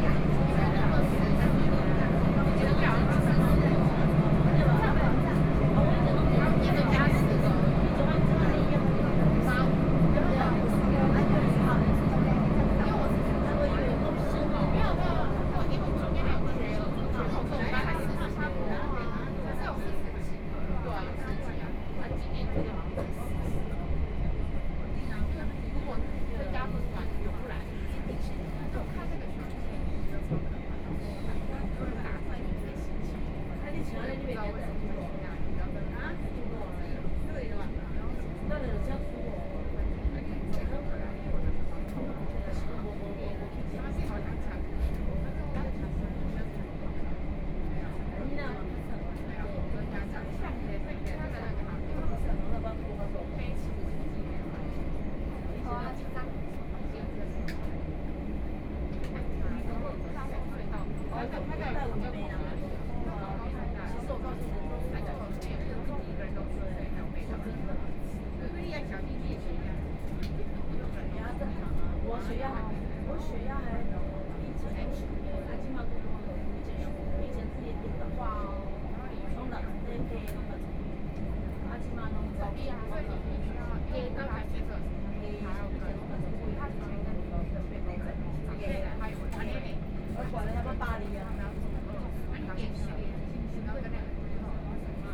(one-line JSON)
{"title": "Pingtung County, Taiwan - Chu-Kuang Express", "date": "2014-09-04 10:24:00", "description": "Chu-Kuang Express, from Pingtung station to Chaozhou station", "latitude": "22.61", "longitude": "120.53", "altitude": "18", "timezone": "Asia/Taipei"}